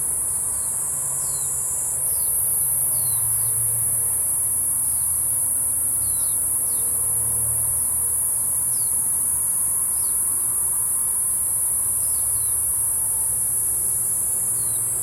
Lane TaoMi, Puli Township - A small village in the evening
Goose calls, Traffic Sound, Insect sounds, A small village in the evening
Zoom H2n MS+XY